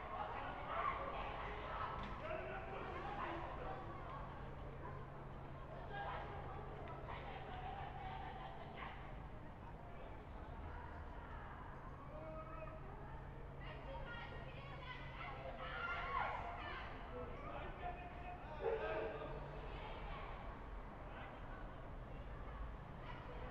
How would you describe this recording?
sex-workers in trouble../ dogs&motors&etc. / siren-doppler-effect (independent event* from the latter)/[XY-recording-mic. In a second-floor-room with an open-window]